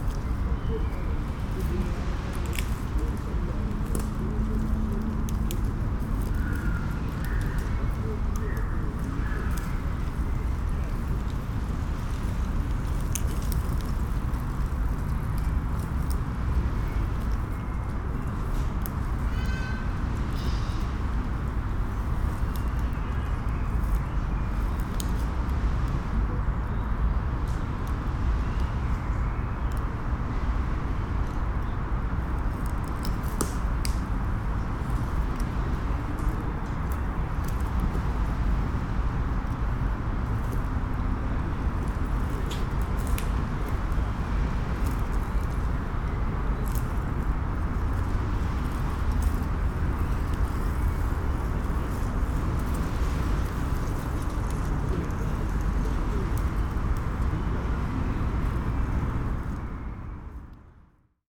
Pirita Beach Tallinn, forest movements
recording from the Sonic Surveys of Tallinn workshop, May 2010